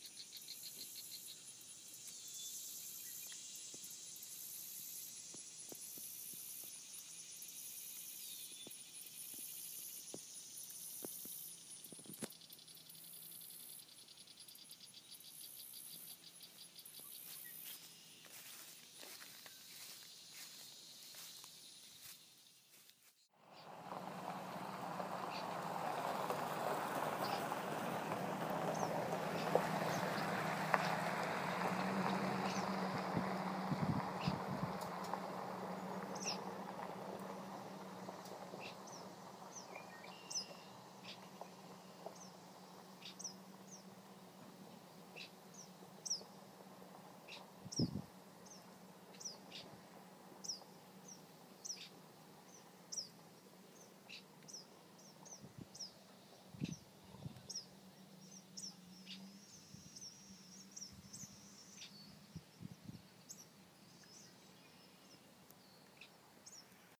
LA, USA - CicadaBirdDay
We spotted a white ibis and parked on Rookery Road for a couple of minutes. I recorded two moments on my cell phone during this time outside.
Breaux Bridge, LA, USA